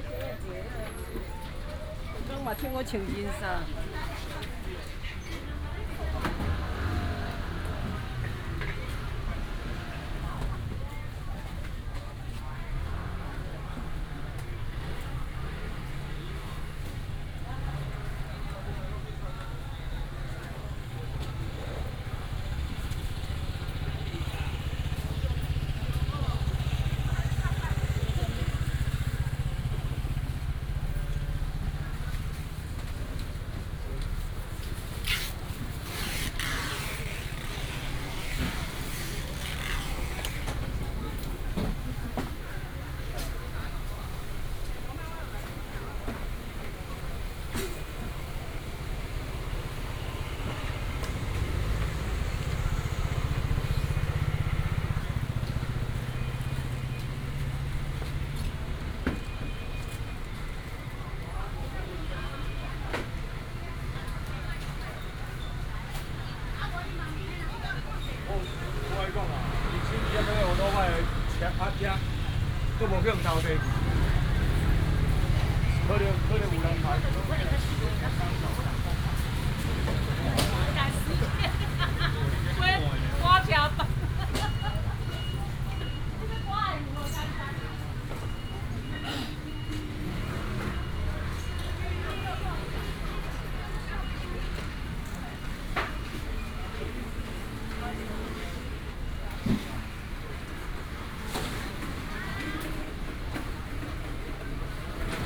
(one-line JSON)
{"title": "台北第一果菜市場, Wanhua Dist., Taipei City - Walking in the wholesale market", "date": "2017-05-06 04:00:00", "description": "Walking in the traditional market, Traffic sound, Vegetables and fruits wholesale market", "latitude": "25.02", "longitude": "121.50", "altitude": "7", "timezone": "Asia/Taipei"}